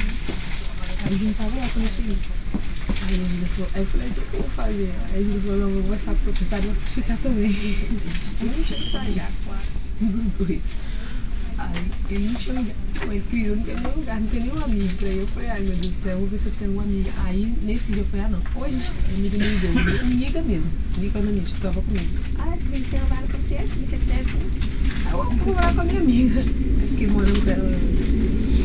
Brussels, North Station, train conversation